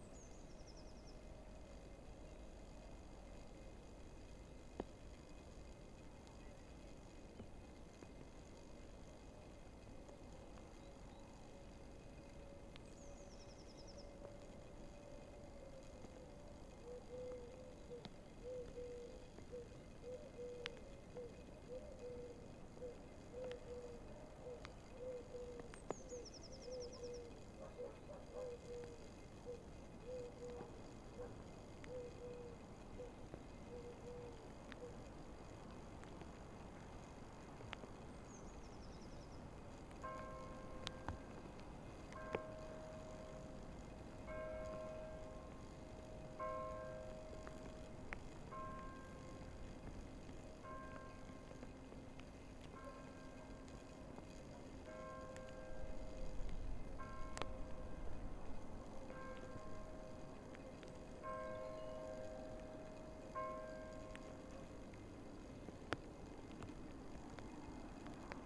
Route de marestaing, Monferran-Savès, France - Lockdown 1 km - noon - angelus rings (East)
Recorded during first lockdown, in the field near the road (1km from the church was the limit authorized).
Zoom H6 capsule xy
Drizzle and mist.